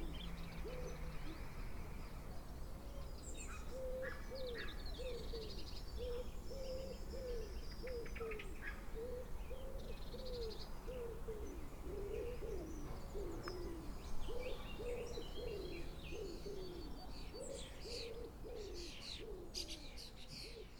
Early morning Cuckoo calling. Recorded on Tascam DR-05 internal microphones with wind muff.